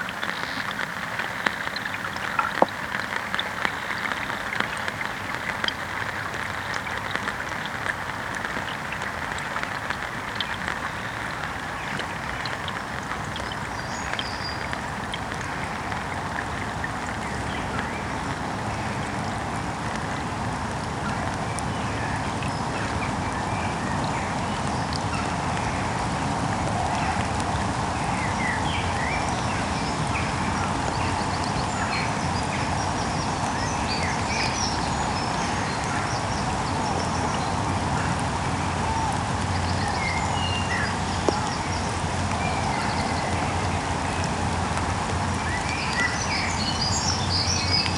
Old Kilpatrick, Glasgow - The Forth & Clyde Canal 001
3 channel mix with a stereo pair of DPA-4060s and an Aquarian Audio H2A hydrophone. Recorded on a Sound Devices MixPre-3